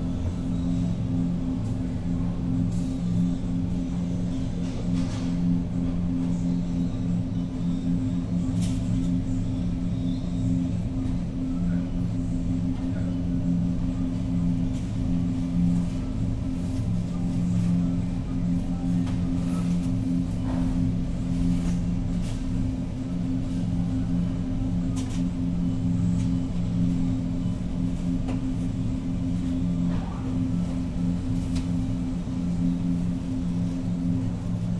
North Lamar, Austin, TX, USA - HEB Bardo 1
Recorded with two DPA4060s in my hands and a Marantz PMD661
27 August